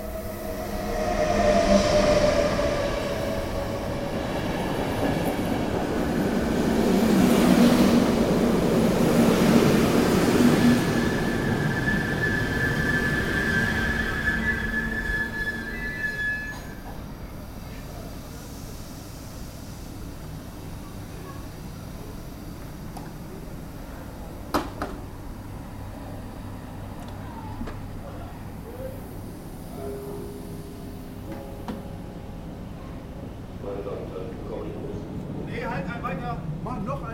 Rosenheim, railway station
recorded outside, trains, repairing works etc. june 6, 2008. - project: "hasenbrot - a private sound diary"